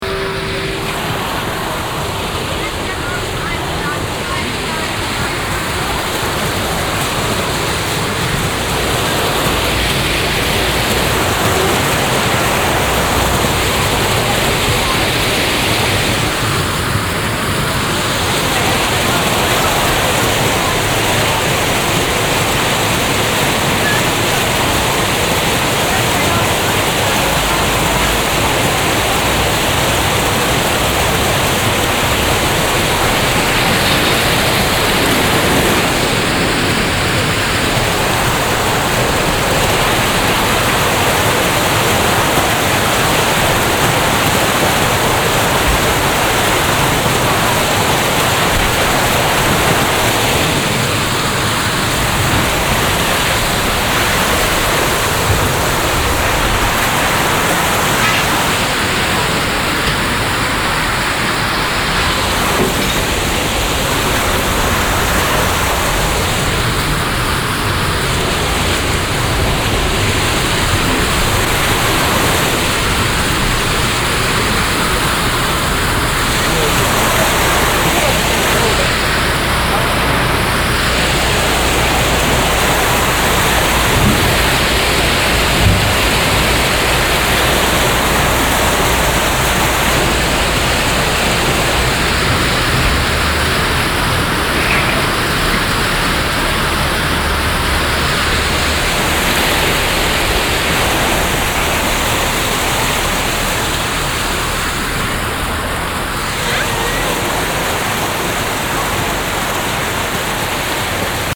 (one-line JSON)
{"title": "Altstadt, Bremen, Deutschland - bremen, domshof, fountain", "date": "2012-06-13 14:40:00", "description": "At the big city square domshof on a market day. The sound of the water spray of a modern fountain.\nsoundmap d - social ambiences and topographic field recordings", "latitude": "53.08", "longitude": "8.81", "altitude": "20", "timezone": "Europe/Berlin"}